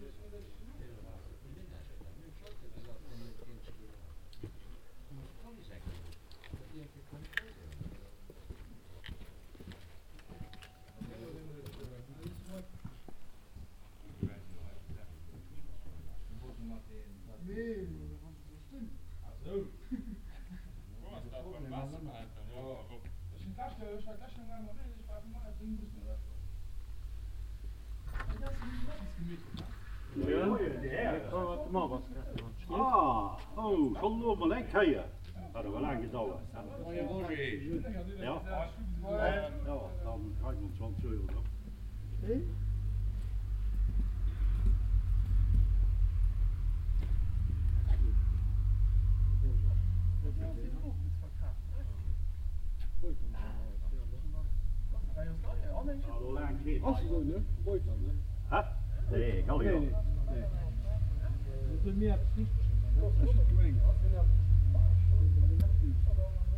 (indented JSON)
{
  "date": "2011-08-10 00:08:00",
  "description": "At a house on the opposite street side of the church. A group of uniformed man ringing the bell at the house and talk to the owner. Motorbikes pass by and the church bells start to ring.\nSchlindermanderscheid, Haaptstrooss\nBei einem Haus gegenüber der Kirche. Eine Gruppe von uniformierten Männern betätigt die Klingel an der Tür und spricht mit dem Besitzer. Motorräder fahren vorbei und die Kirchenglocke beginnt zu läuten.\nSchlindermanderscheid, Haaptstrooss\nDans la rue, près d’une maison de l’autre côté de l’église. Un groupe d’hommes en uniforme appuie sur la sonnette et discute avec le propriétaire. Des motards passent et les cloches de l’église commencent à sonner.",
  "latitude": "49.93",
  "longitude": "6.06",
  "altitude": "389",
  "timezone": "Europe/Luxembourg"
}